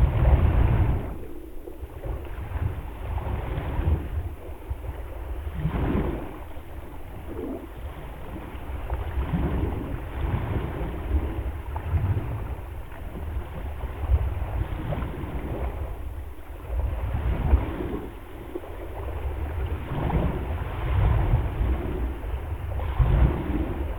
Jūrmala, Latvia, hydrophone in the sand
hydrophone in the beach's sand, near seashore